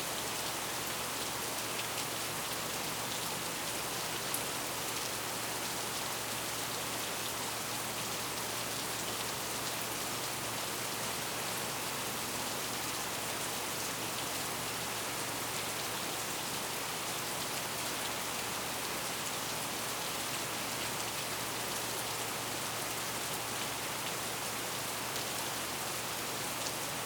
E Coll St, New Braunfels, TX, Verenigde Staten - thunder and trains in New Braunfels, Texas
thunder and trains in New Braunfels, Texas
Texas, United States of America, 10 July 2005